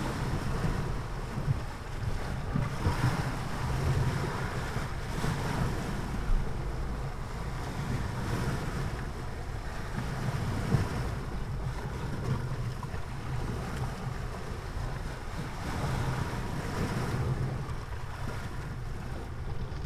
{"title": "Altea, Alicante, España - Ventana del Bunker", "date": "2015-04-29 13:00:00", "description": "Grabación metiendo los micros dentro de la tronera del bunker. El acceso al búnker está imposible por estar colmatado", "latitude": "38.62", "longitude": "-0.03", "altitude": "4", "timezone": "Europe/Madrid"}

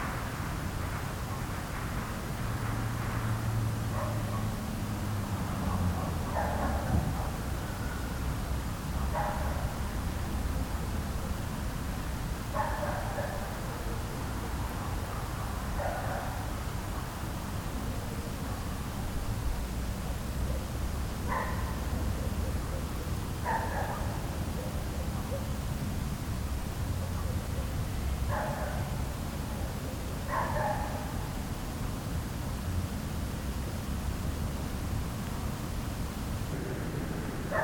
{"title": "Maintenon, France - Night boredom", "date": "2016-01-01 00:40:00", "description": "Far away, a small dog is barking all night because of boredom, in a night ambience.", "latitude": "48.59", "longitude": "1.59", "altitude": "121", "timezone": "Europe/Paris"}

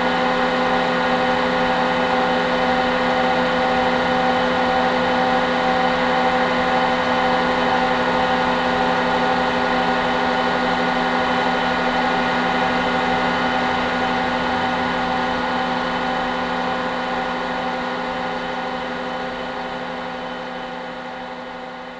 {"title": "Mont-Saint-Guibert, Belgique - The dump", "date": "2016-10-02 13:40:00", "description": "This is the biggest dump of Belgium. A big machine is catching gas into the garbages.", "latitude": "50.65", "longitude": "4.62", "altitude": "131", "timezone": "Europe/Brussels"}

